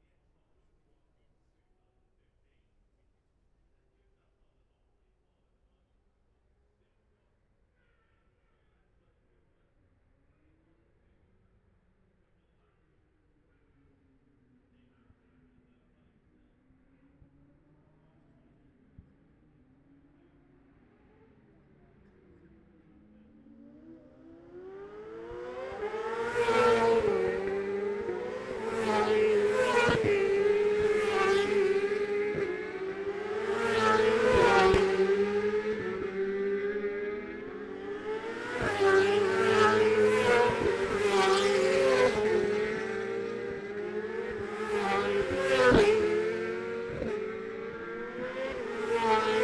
Jacksons Ln, Scarborough, UK - olivers mount road racing 2021 ...
bob smith spring cup ... 600cc Group A qualifying ... luhd pm-01 mics to zoom h5 ...
22 May 2021, ~12:00